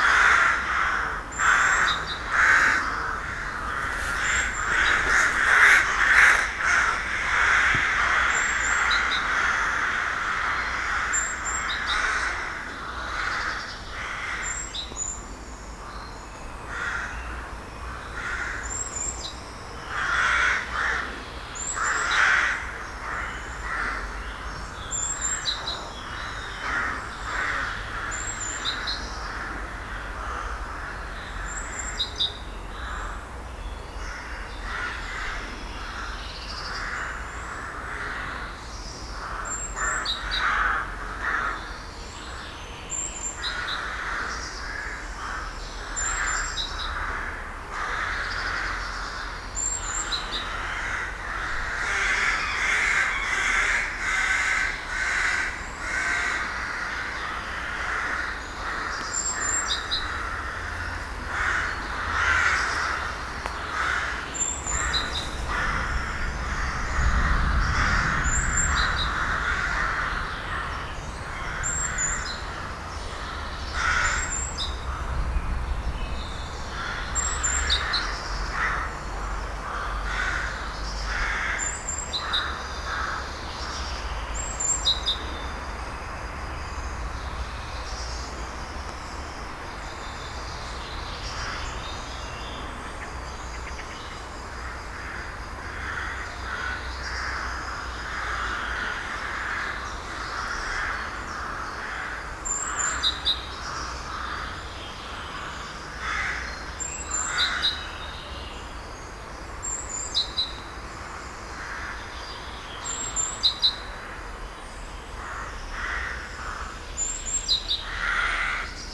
Hautmont, France - Unhappy crows
Into the abandoned and literally pulverized Haumont bunker, a large colony of crows keeps an eye on the babies on the nests. Birds are very unhappy I'm here. During a small storm, with a very unfriendly neighborhood, a completely destroyed bunker and all this crows, I just find the place oppressive.